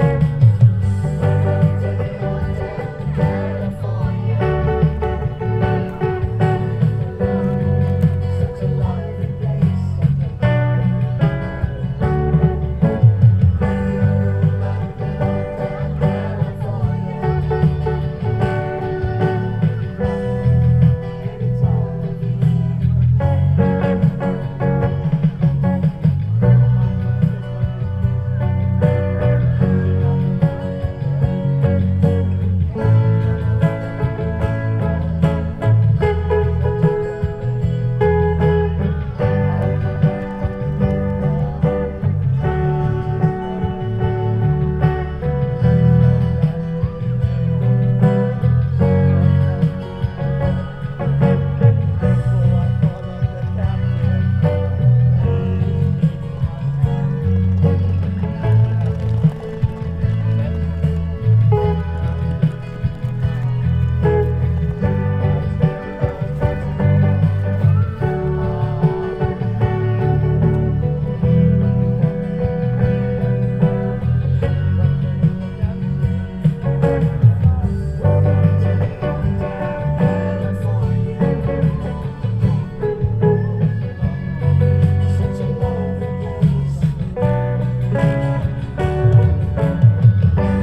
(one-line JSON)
{"title": "Forgetful Busker, Bethesda Fountain, Central Park, New York, USA - Busker", "date": "2019-06-24 11:18:00", "description": "At The Bethesda Fountain while we munch our lunch this busker seems oblivious that his microphone is unplugged. The crowd don't mind and help him out.\nMixPre 3 with 2 x Beyer Lavaliers.", "latitude": "40.77", "longitude": "-73.97", "altitude": "23", "timezone": "America/New_York"}